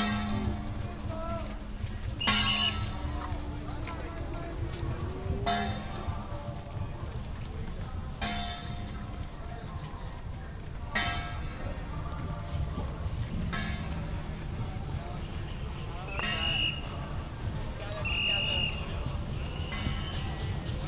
Matsu Pilgrimage Procession, A lot of people, Directing traffic, Whistle sound, Footsteps